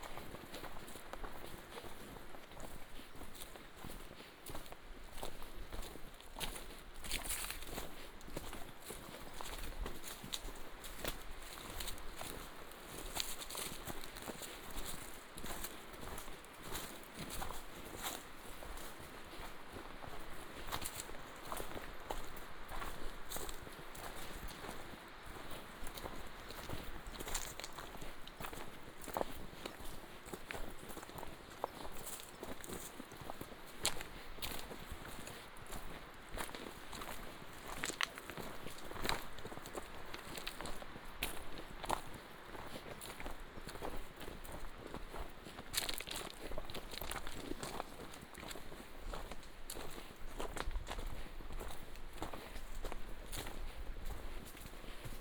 大竹溪, 達仁鄉台東縣 - Follow the Aboriginal Hunters
Stream sound, Follow the Aboriginal Hunters walking along the old trail, Footsteps, goat